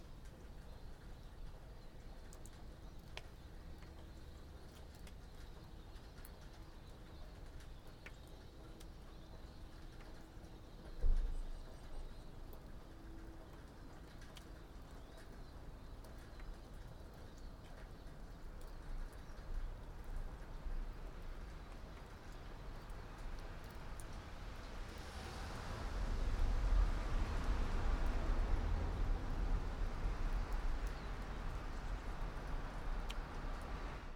all the mornings of the ... - feb 24 2013 sun